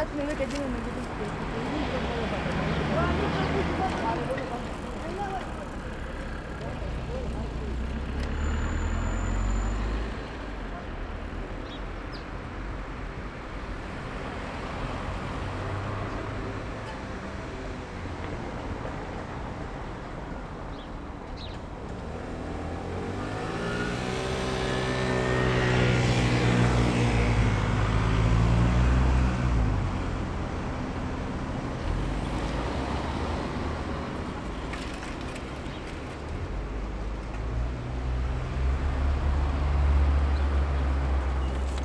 Berlin: Vermessungspunkt Maybachufer / Bürknerstraße - Klangvermessung Kreuzkölln ::: 10.08.2010 ::: 09:07